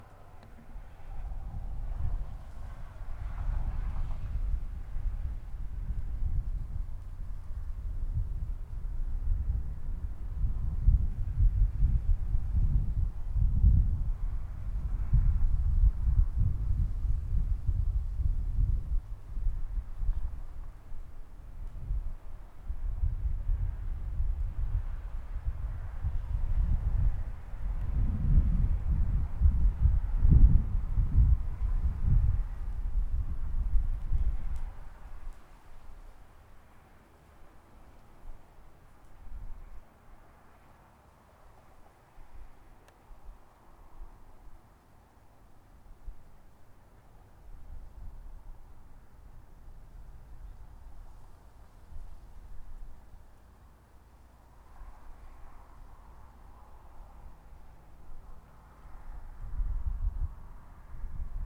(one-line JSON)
{"title": "Sand Lake Park, McCollister Blvd, Iowa City, IA, USA - Terry Trueblood Ambient", "date": "2022-01-23 09:55:00", "description": "Ambient noise of wind and cars passing by at Terry Trueblood Recreation Area in Iowa City. Recorded on H4n Pro.", "latitude": "41.63", "longitude": "-91.53", "altitude": "195", "timezone": "America/Chicago"}